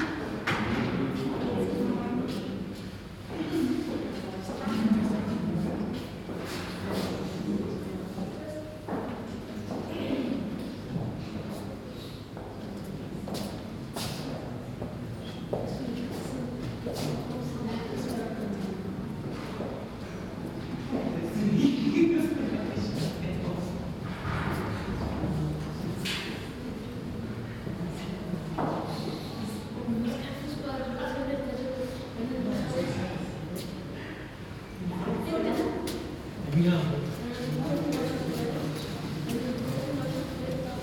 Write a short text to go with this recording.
Friedrich-Krause-Ufer, Berlin - Ausländerbehörde (aliens registration office) corridor ambience. [I used an MD recorder with binaural microphones Soundman OKM II AVPOP A3]